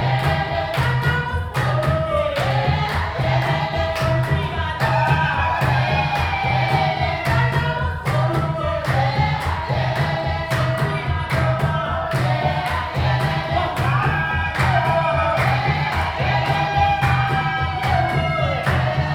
Community Hall, Matshobana, Bulawayo, Zimbabwe - A Rainmaking Song...
This excerpt of a rainmaking song will take you in to a rehearsal of the Thandanani Women’s Ensemble. Imagine a group of about a dozen women in their 40s, 50s and 60s engaged in a most energetic dance and song…
The Thandanani Women’s Ensemble was formed in 1991 by women in their 40s and 50s most of them from Mashobana township. The initial idea was to enjoy their arts and culture together and to share it with the young generation, thus the Ndebele word “thandanani” means, loving one another. The group is well known for their vibrant performances in traditional song and dance, established in the national arts industry and well versed as accapella performers in recordings and performers in film.
You can find the entire list of recordings from that day archived here:
30 October 2013, 10:40